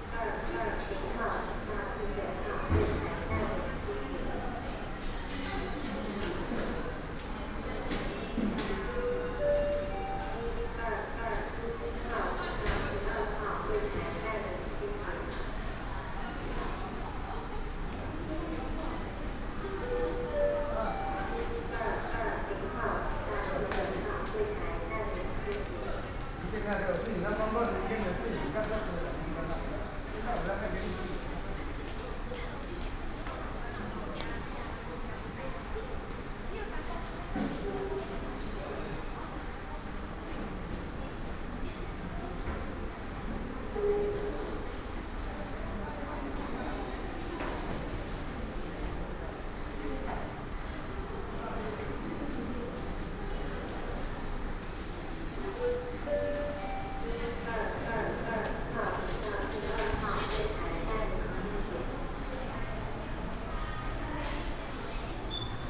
臺北市立聯合醫院仁愛院區, Da’an Dist., Taipei City - In hospital
In hospital, Front payment counter
Taipei City, Taiwan